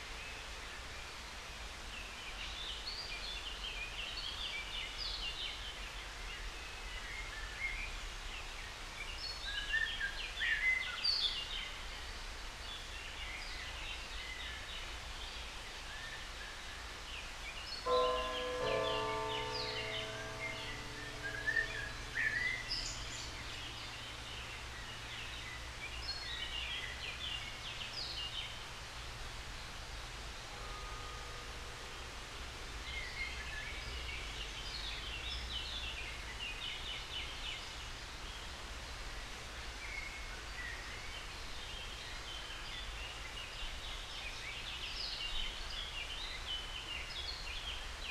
Grgar, Grgar, Slovenia - Near stream Slatna
Birds in the forest. Recorded with Sounddevices MixPre3 II and LOM Uši Pro.